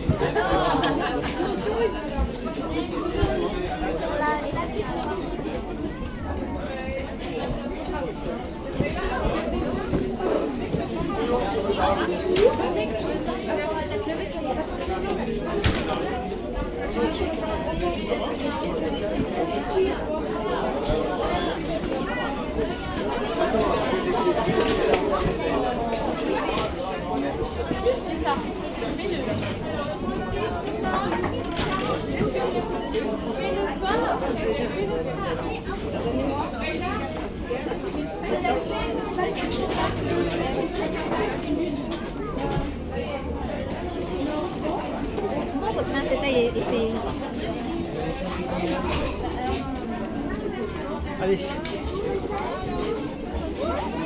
Rambouillet, France - Skating rink
the waiting room of a small skating rink. It's completely crowded.